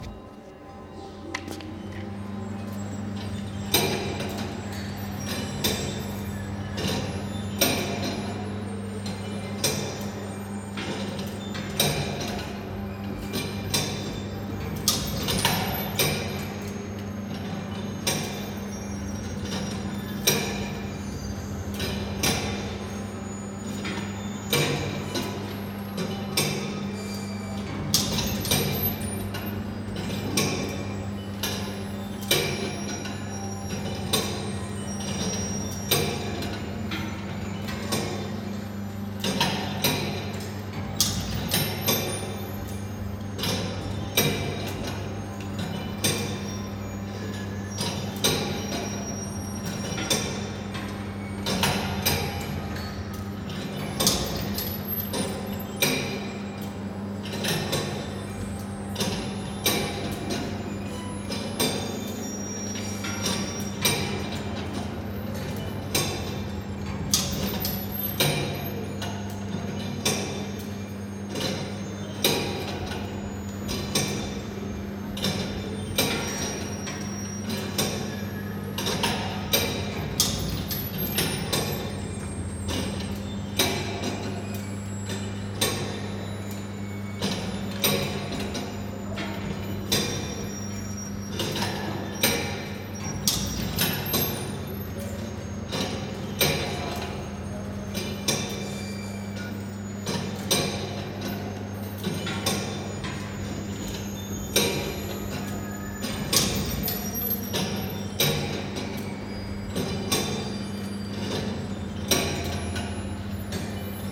Fribourg, Switzerland - Sculpture Jean Tinguely
Kinetic Sculpture by Jean Tinguely in Espace Jean Tinguely in Fribourg.
Sony MS mic + DAT